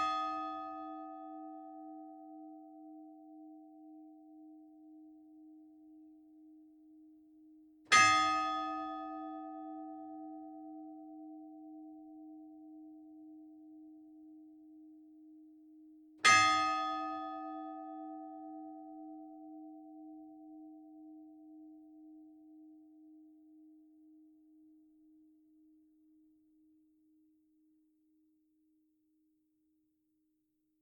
Le Bourg-Nord, Tourouvre au Perche, France - Prépotin (Parc Naturel Régional du Perche) - église
Prépotin (Parc Naturel Régional du Perche)
église - tintement automatisé